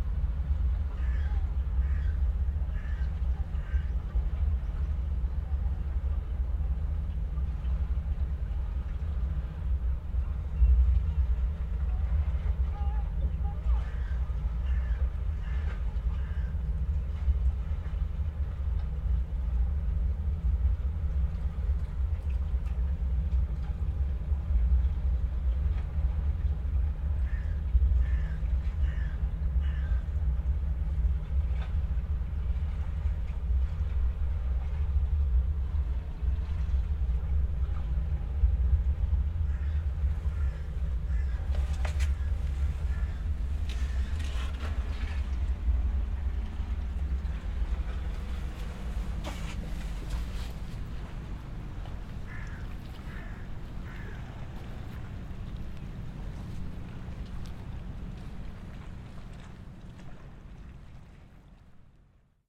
Punto Franco Vecchio, Molo, Trieste, Italy - sound in a concrete refuge
in a small concrete refuge at the waterfront, probably used to protect workers from strong waves
(SD702, DPA4060)